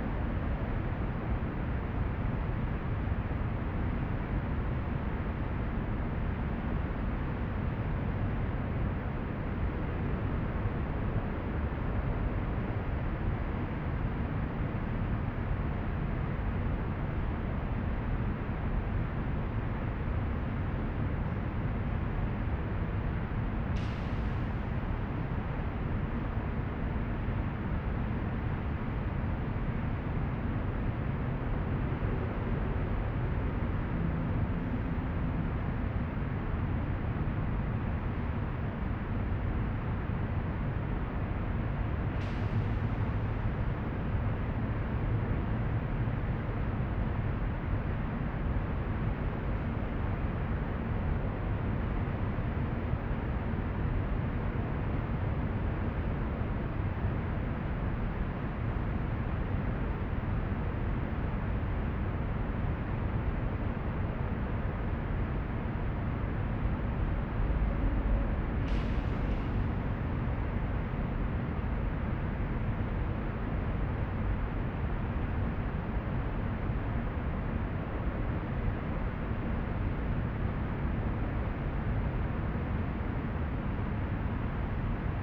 Mannesmannufer, Düsseldorf, Deutschland - Düsseldorf, KIT, exhibition hall, center
Inside the KIT exhibition hall in the center. The sound of the traffic underneath the long reverbing hall.
This recording is part of the intermedia sound art exhibition project - sonic states
soundmap nrw - sonic states, social ambiences, art places and topographic field recordings
22 November 2012, ~13:00